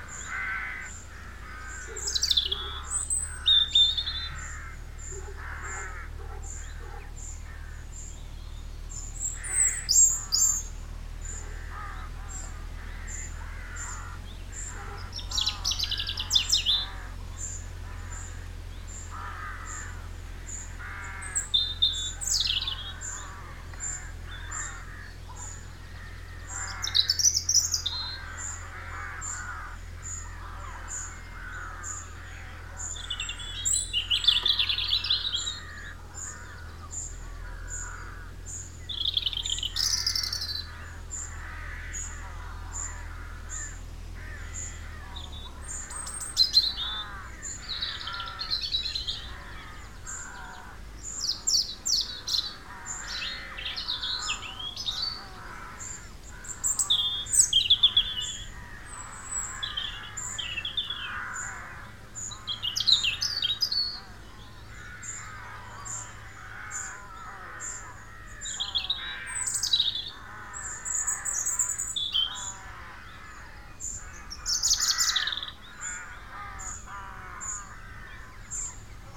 Robertstown, Co. Meath, Ireland - MORNING BIRDS
close to the trees, garden in rural situation, early morning, rode nt4 + sound devices 722